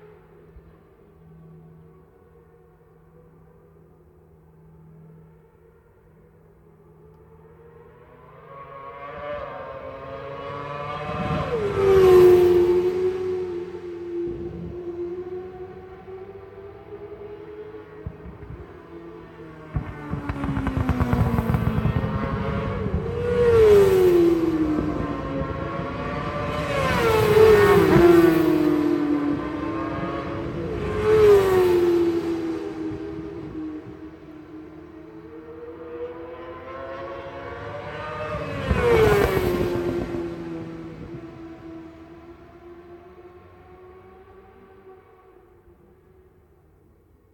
world superbikes 2004 ... supersport 600s qualifying ... one point stereo mic to minidisk ... date correct ... time not ...
West Kingsdown, Longfield, UK, July 2004